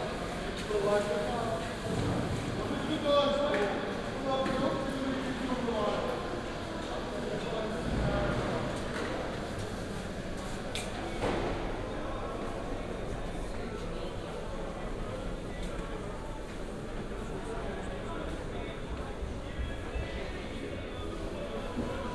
Funchal, Mercado dos Lavradores - Mercado dos Lavradores
(binaural) walking around worker's market in Funchal. it wasn't very busy at that time. vendors setting up their stands, laying out goods for sale. the fish are being clean out and cut in the other room.
Funchal, Portugal, 9 May 2015